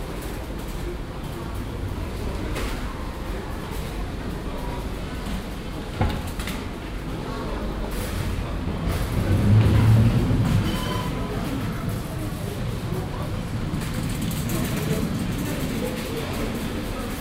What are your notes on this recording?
shopping center in the afternoon, project: : resonanzen - neanderland - social ambiences/ listen to the people - in & outdoor nearfield recordings1